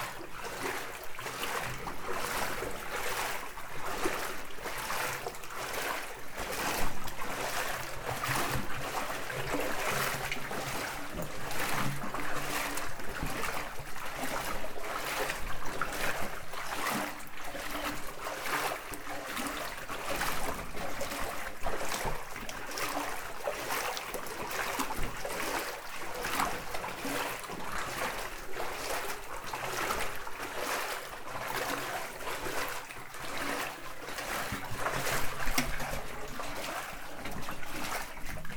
Rue de Frameries, Mons, Belgium - Exploring a flooded mine
We are exploring a flooded underground mine. In first, we cross a tunnel with few water (0:00 to 19:00 mn) and after we are going deep to the end of the mine with boats. It's a completely unknown place. The end is swimming in a deep cold 4 meters deep water, a quite dangerous activity. Because of harsh conditions, the recorder stopped recording. So unfortunately it's an incomplete recording.
December 2017